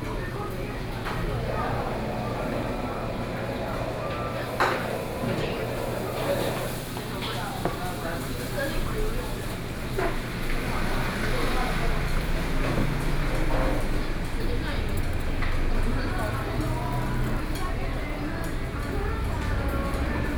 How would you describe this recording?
In the restaurant, Traffic Sound